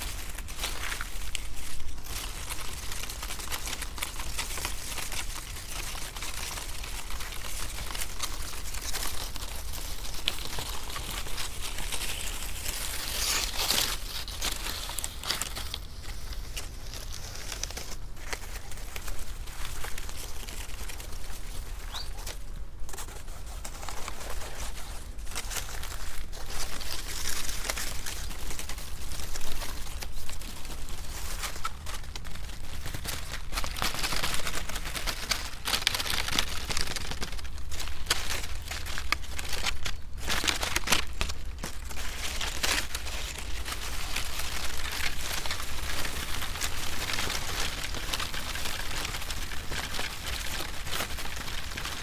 corn, Šturmovci, Slovenia - leftovers
playing with few dried corn stalks
30 September 2012, 16:20